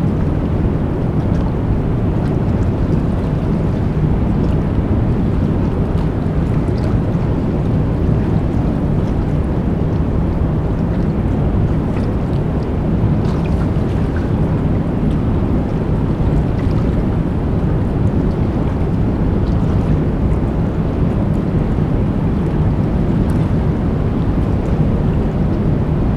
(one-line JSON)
{"title": "Lithuania, Utena, spring water drone", "date": "2011-04-01 14:50:00", "description": "waters, as living structures, are in constant change. this is monstrous drone of dam", "latitude": "55.52", "longitude": "25.63", "altitude": "116", "timezone": "Europe/Vilnius"}